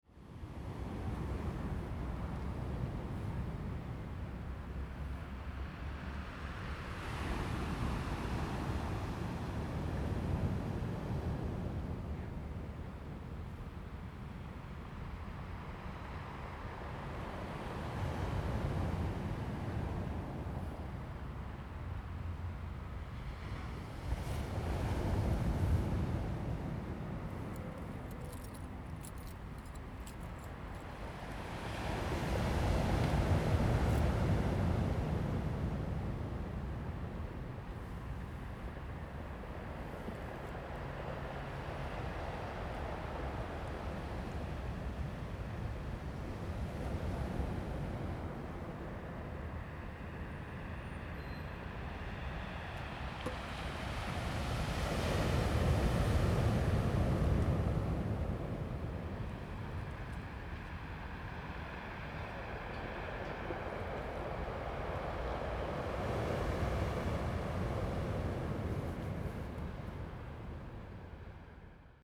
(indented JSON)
{
  "title": "Hualien City, Taiwan - at the seaside",
  "date": "2016-12-14 16:05:00",
  "description": "Waves sound, at the seaside, Distance from the waves\nZoom H2n MS+XY +Spatial Audio",
  "latitude": "23.98",
  "longitude": "121.62",
  "altitude": "9",
  "timezone": "GMT+1"
}